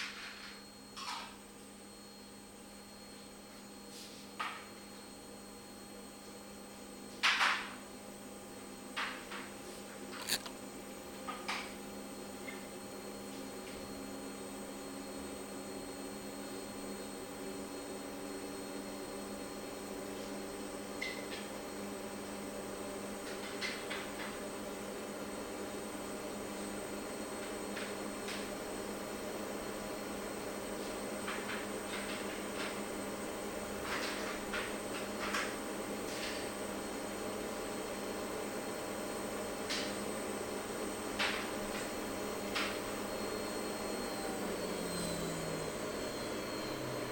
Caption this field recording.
Villeneuve d'Ascq (Nord), Université de Lille, Laboratoire de biochimie, Ambiance